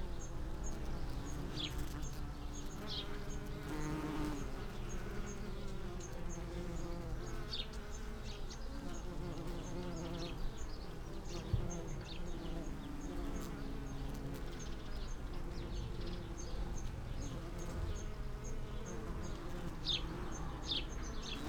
Chapel Fields, Helperthorpe, Malton, UK - bees on lavender ...
bees on lavender ... SASS between two lavender bushes ... bird song ... calls ... from ... starling ... song thrush ... house sparrow ... blackbird ... house martin ... collared dove ... background noise ... traffic ...